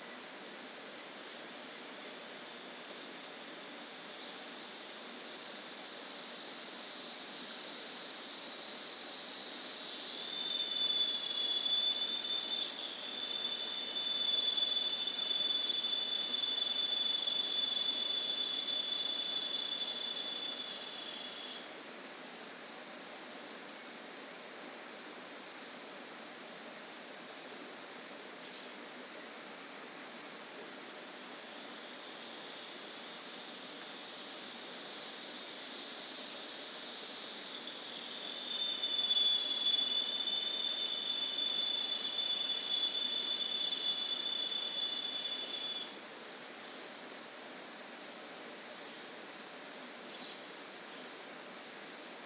Parque da Cantareira - Núcleo do Engordador - Trilha da Cachoeira - ii
register of activity